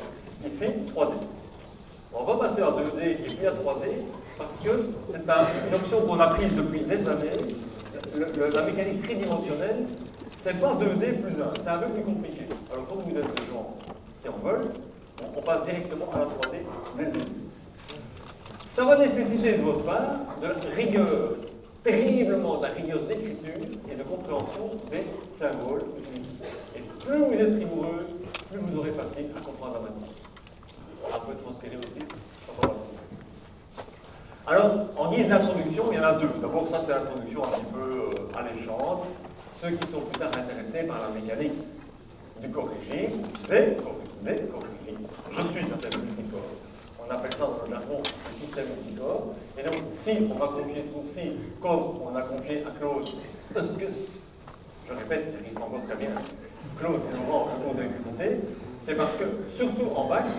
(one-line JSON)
{"title": "Quartier du Biéreau, Ottignies-Louvain-la-Neuve, Belgique - A course of mechanic", "date": "2016-03-11 15:58:00", "description": "A course of mechanic, in the huge auditoire called Croix du Sud.", "latitude": "50.67", "longitude": "4.62", "altitude": "139", "timezone": "Europe/Brussels"}